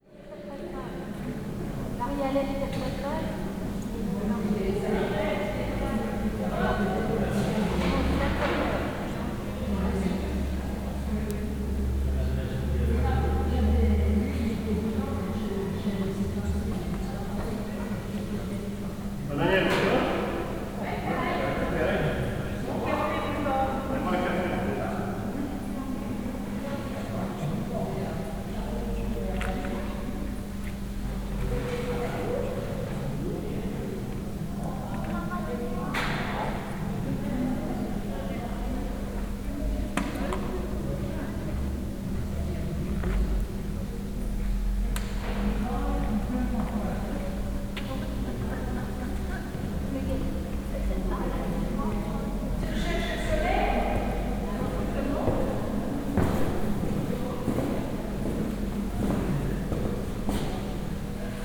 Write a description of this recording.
(binaural) recorded in a church. voices of a large group of French tourists having their lunch in front of the church.